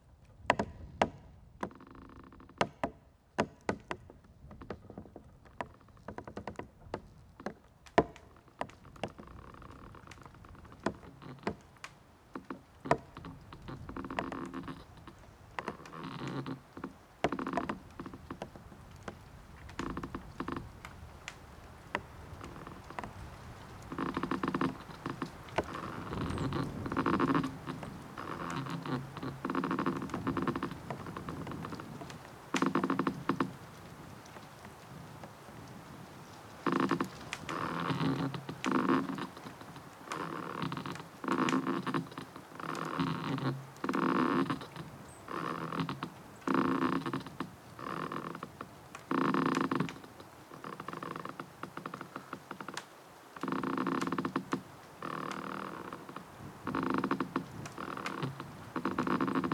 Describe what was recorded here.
everything is frozen: cracking, moaning trees in wind, little river in the valley